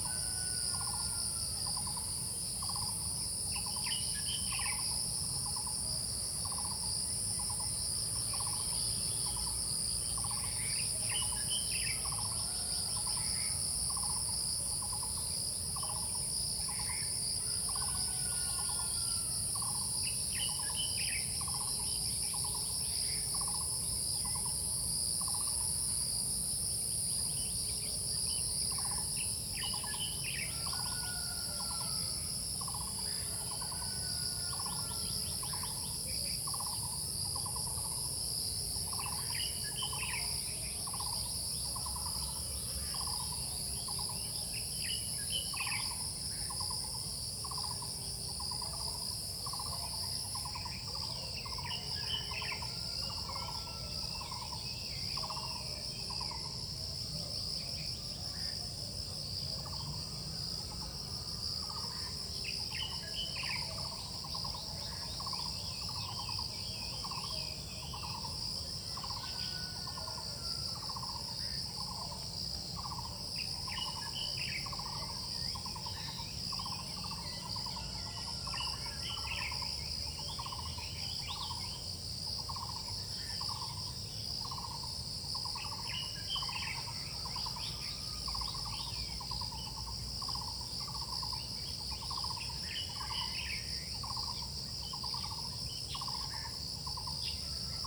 中路坑, 桃米里, 埔里鎮 - Bird and insects
Sound of insects, Bird sounds, in the morning, Crowing sounds
Zoom H2n MS+XY
Puli Township, 手作步道